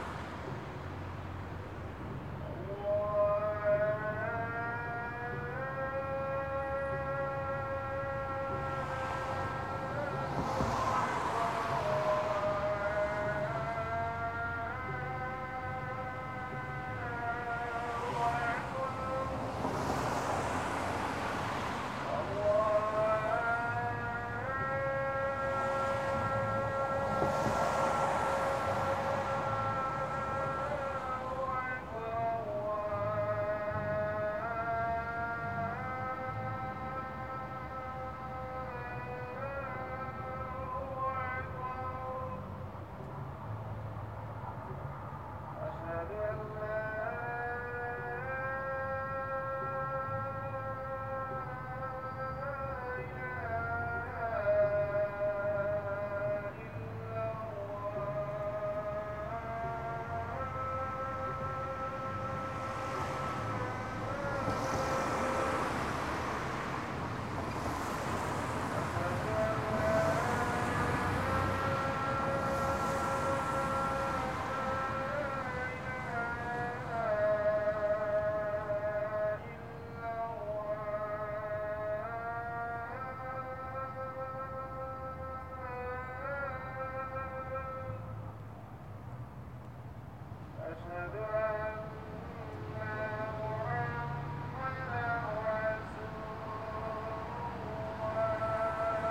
Hamtramck, MI, USA - Islamic Call to Prayer (Evening on Holbrook St.)
Islamic Call to Prayer recorded in early evening on sidewalk on Holbrook Street. Used a Tascam DR o7 handheld with wind screen and low cut filter on. Right beside a very busy street, loud passing of cars with some light urban ambiance finish the atmosphere. Only edits in audacity were fade in, out, and slight gain increase.
April 2015